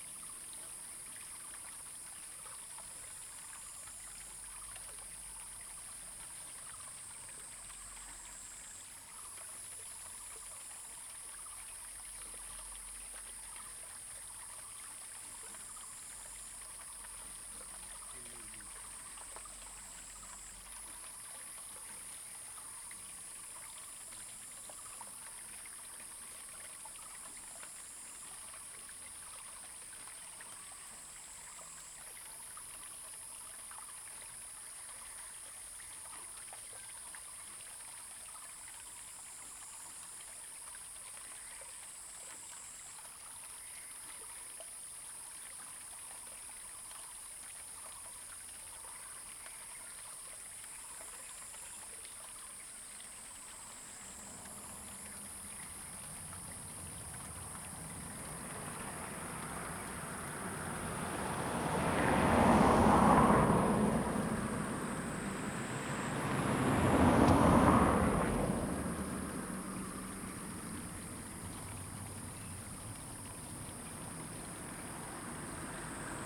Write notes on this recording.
Birdsong, Traffic Sound, Stream, Frogs sound, Zoom H2n MS +XY